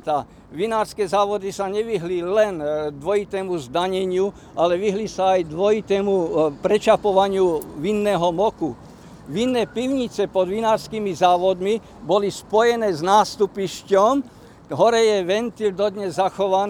Unedited recording of a talk about local neighbourhood.